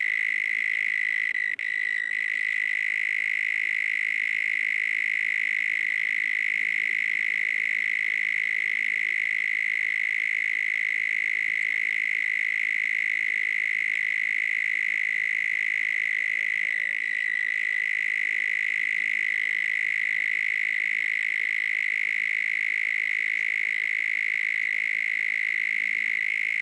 Loud cicada @ garden in Almada. Recorded with Zoom H6 XY stereo mic.